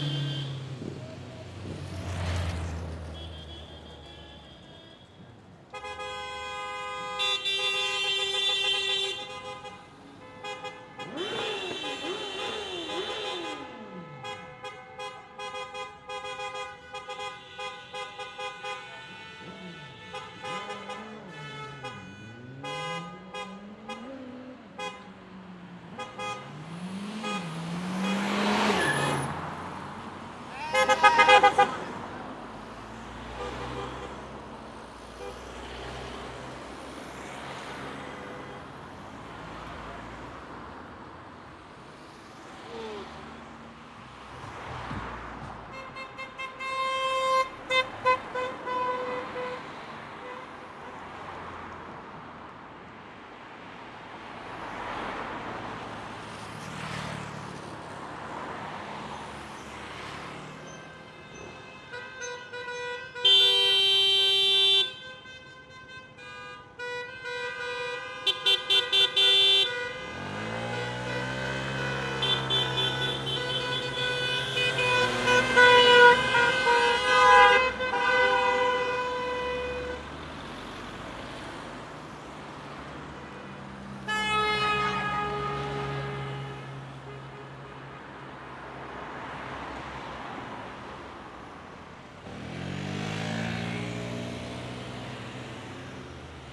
Marseille - Boulevard Michelet
Demi finale Euro 2016 - France/Allemagne
fin de match